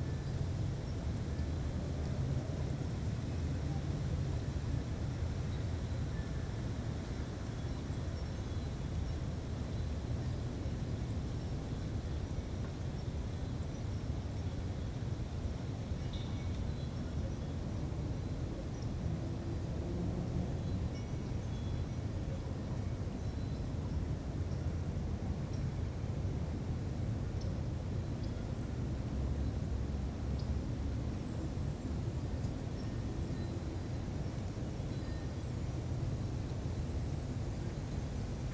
Glendale Ln, Beaufort, SC, USA - Glendale Lane - Marsh
Recording in the marsh surrounding a street in Beaufort, South Carolina. The area is very quiet, although some sounds from a nearby road do leak into the recording. There was a moderate breeze, and wind chimes can be heard to the right. Birds and wildlife were also picked up. The mics were suspended from a tree branch with a coat hanger. A low cut was used on the recorder.
[Tascam Dr-100mkiii & Primo EM-272 omni mics]
South Carolina, United States